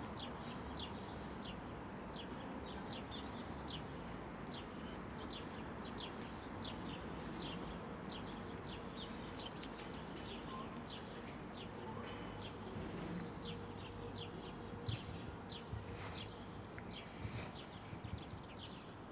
Paris-Lodron-Straße, Salzburg, Österreich - kunstquartier